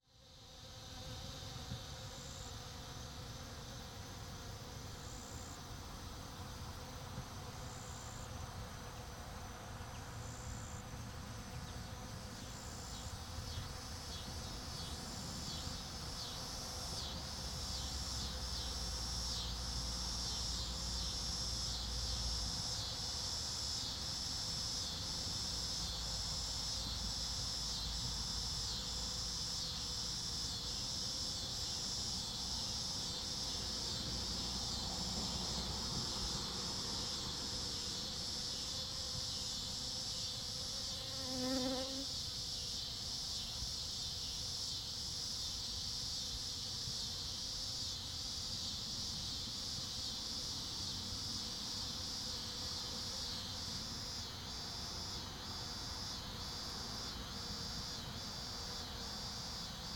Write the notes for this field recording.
A post for World Honey Bee Day! Honey bees swarming a flowering shrub. Cicadas. Passing traffic.